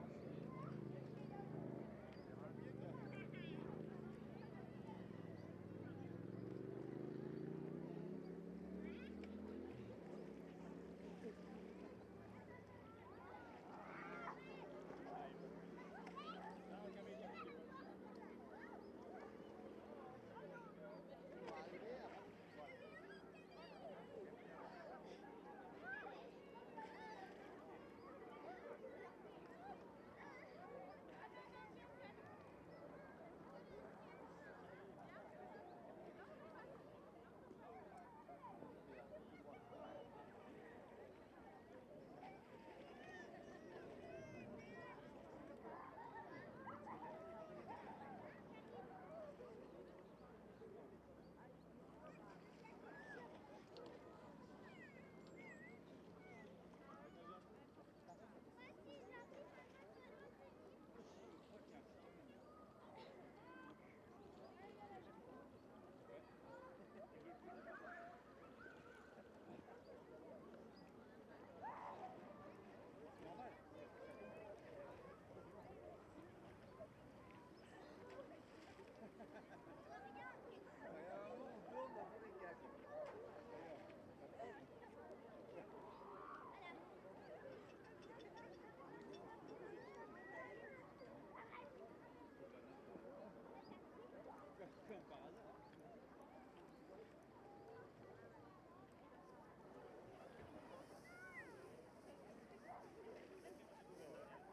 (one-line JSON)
{"title": "Oyonnax, France - Lac Genin (Oyonnax - Ain)", "date": "2022-08-28 13:30:00", "description": "Lac Genin (Oyonnax - Ain)\nDernier week-end avant la rentrée scolaire\nLe soleil joue avec les nuages, la température de l'eau est propice aux baignades\nla situation topographique du lac (dans une cuvette) induit une lecture très claire du paysage sonore.\nZOOM F3 + Neuman KM184", "latitude": "46.22", "longitude": "5.70", "altitude": "841", "timezone": "Europe/Paris"}